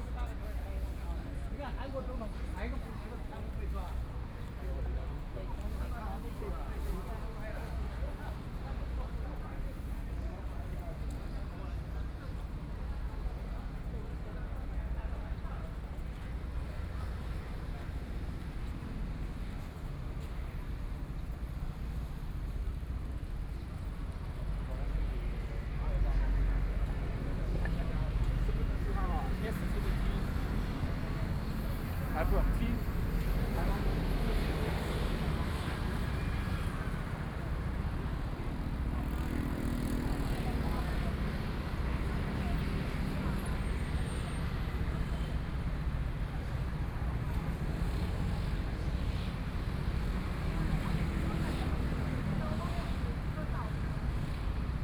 Walking through the site in protest, People and students occupied the Legislature Yuan
Taipei City, Taiwan, 3 April 2014, 13:49